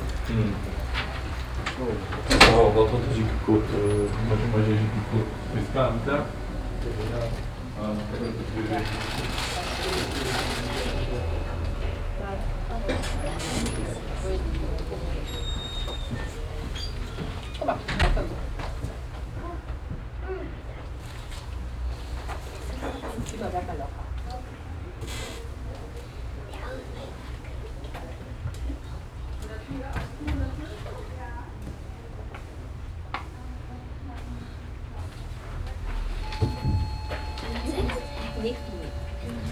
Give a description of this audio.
Inside the city office - the sound of an electronic bell signalizing the next numbers in the waiting row, steps in the hallway, whispering voices of waiting people and door sounds. soundmap nrw - social ambiences and topographic field recordings